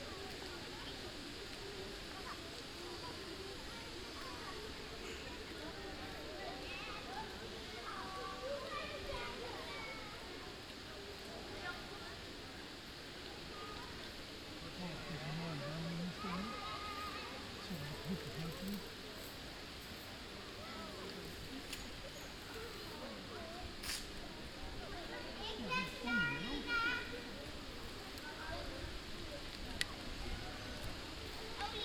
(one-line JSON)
{"title": "Rabbijn Maarsenplein, Den Haag, Nederland - Kids playing", "date": "2015-08-06 14:20:00", "description": "Kids playing on the Rabbijn Maarsenplein. A slightly windy recording but I thought it was nice anyway. The background 'white noise' are the leaves of the plane trees standing there.\nBinaural recording.", "latitude": "52.08", "longitude": "4.31", "altitude": "5", "timezone": "Europe/Amsterdam"}